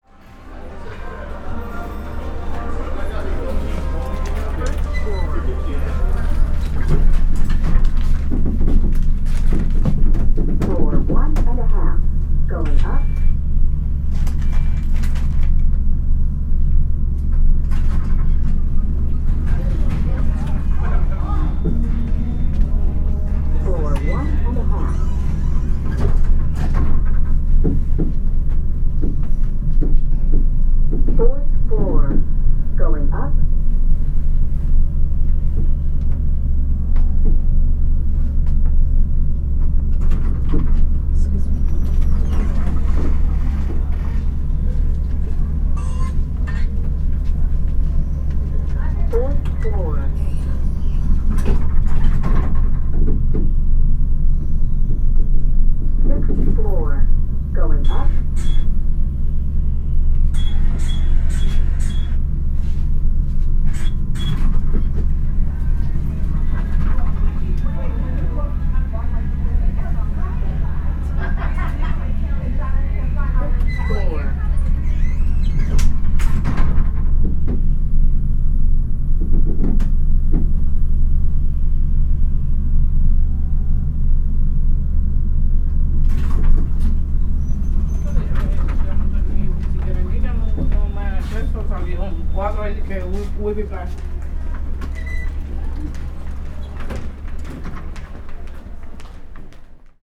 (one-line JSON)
{"title": "Old Elevator - Macys, New York, USA", "date": "2019-07-03 11:30:00", "description": "A ride up in an old elevator. Recorded on a Sound Devices Mix Pre 3 with 2 Beyer lavaliers.", "latitude": "40.75", "longitude": "-73.99", "altitude": "17", "timezone": "America/New_York"}